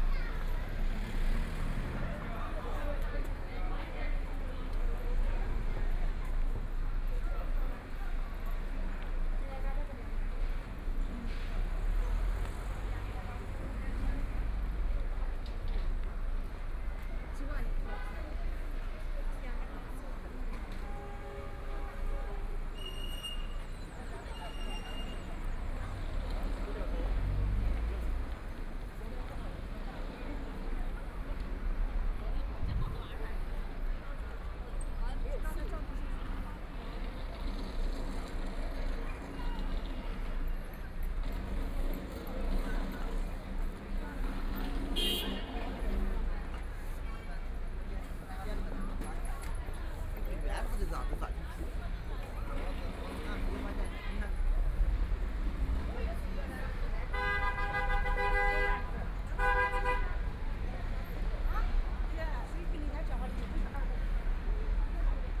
Lishui Road, Shanghai - walking in the Street
walking in the Street, Shopping street sounds, The crowd, Bicycle brake sound, Traffic Sound, Binaural recording, Zoom H6+ Soundman OKM II
Shanghai, China, 25 November